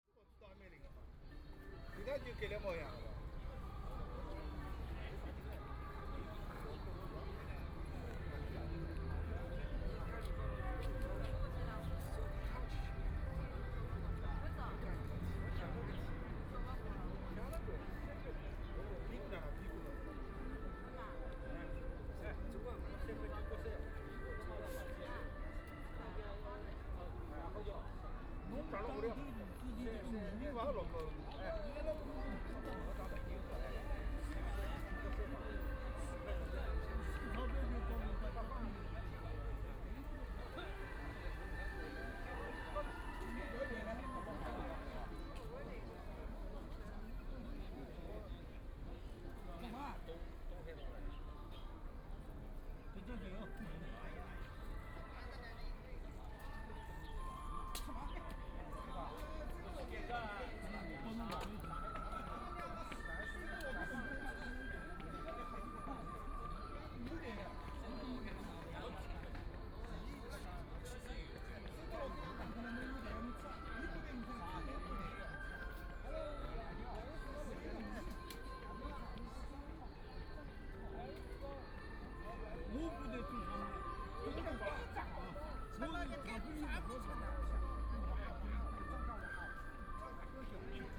Penglai Park, Shanghai - playing cards
Very cold weather, Many people gathered in the square park, Group of a group of people gathered together playing cards, Binaural recording, Zoom H6+ Soundman OKM II